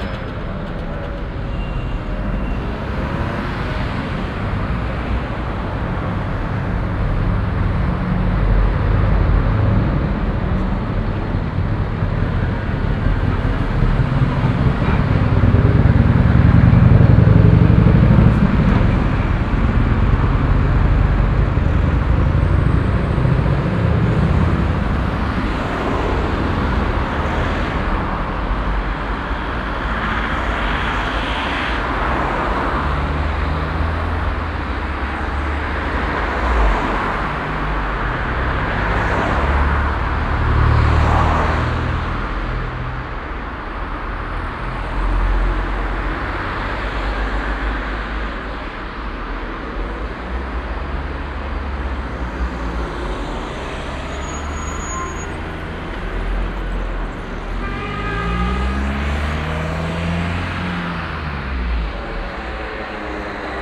heiligenhaus, hauptstrasse, traffic
verkehrsgeräusche an der hauptstrasse, mittags
project: :resonanzen - neanderland - soundmap nrw: social ambiences/ listen to the people - in & outdoor nearfield recordings, listen to the people